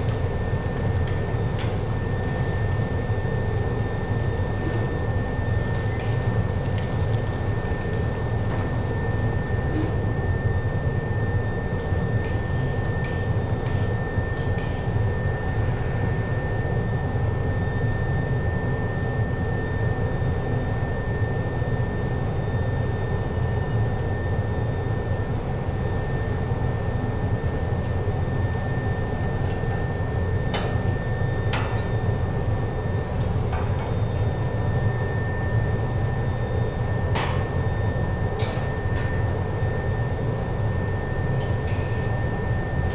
langenfeld, steel factory
another recording inside of the steel factory - here: the pour off of the melted steel
soundmap nrw/ sound in public spaces - in & outdoor nearfield recordings